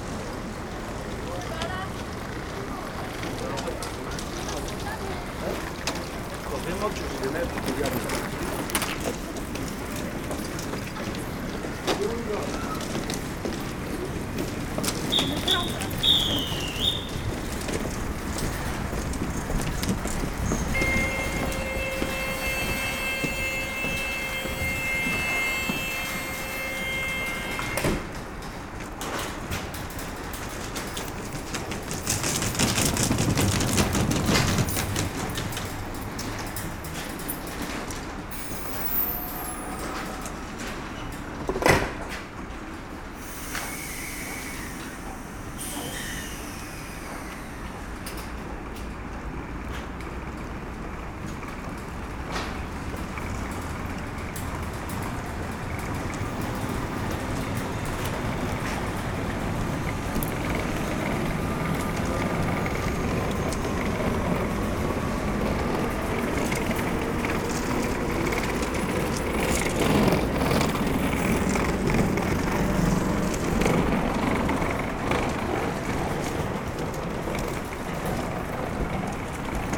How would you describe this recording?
Mons is a no-station. It's an horrible place, where construction works are engaged since years and years. Nothing is moving all around, like this would be a too complicate building. In this no man's land, some commuters take the train on the Christmas day. It's very quiet, as few people use a so maladjusted place.